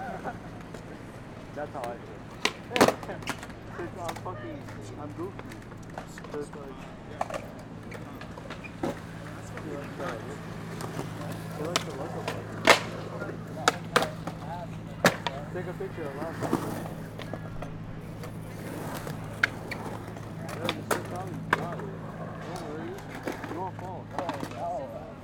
N Moore St, New York, NY, USA - Tribeca Skatepark, Pier25
Tribeca Skatepark, Hudson River Park Pier 25
NYC, New York, USA, June 2019